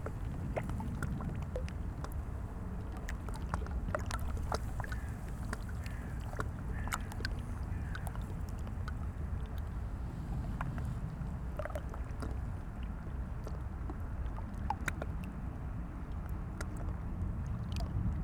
Britzer Verbindungskanal meets river Spree, Sunday river side ambience
(Sony PCM D50, DPA4060)